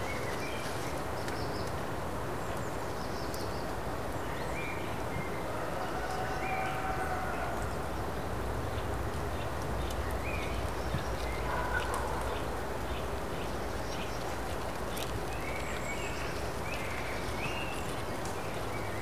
Morasko, forest path - shrivelled leaves
recorder pointed towards small forest, close to a bunch of dried leaves, lots of bird and insect activity in the forest due to very mild weather.